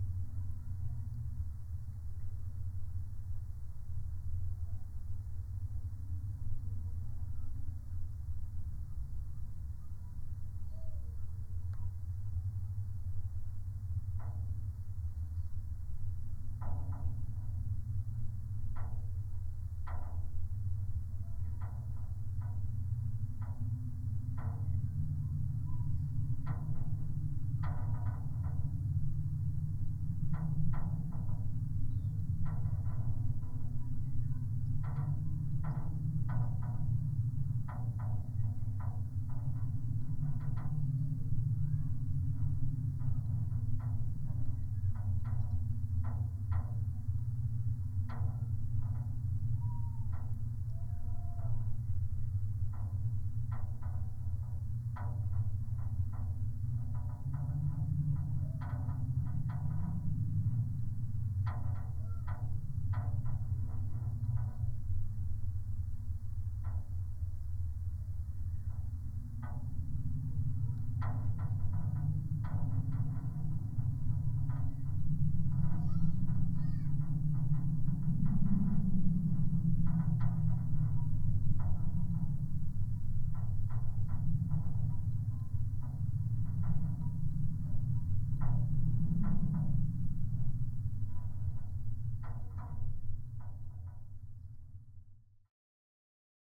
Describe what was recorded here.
fences on yacht. recorded with contact microphone